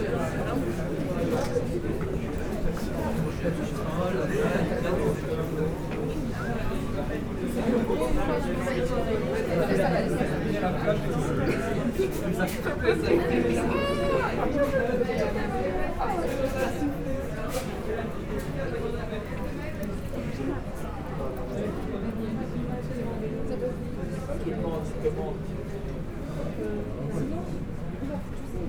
Quartier du Biéreau, Ottignies-Louvain-la-Neuve, Belgique - Sandwich shop
Students waiting in a very long line, in a sandwich shop.
Ottignies-Louvain-la-Neuve, Belgium, 11 March 2016